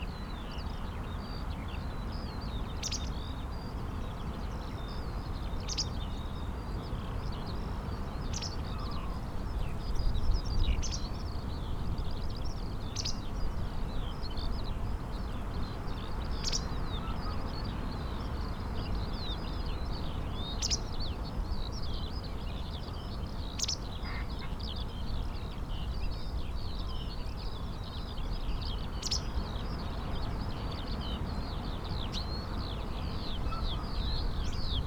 muck heap soundscape ... pre-amplified mics in SASS ... bird calls ... song ... pied wagtail ... skylark ... carrion crow ... chaffinch ... large muck heap in field waiting to be spread ...